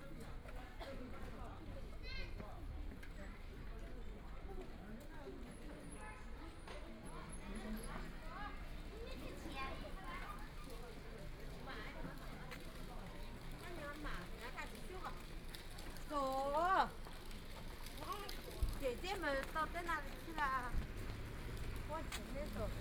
Ji'nan Road, Shanghai - Walking on the street
Walking on the street, About to be completely demolished the old community, Binaural recordings, Zoom H6+ Soundman OKM II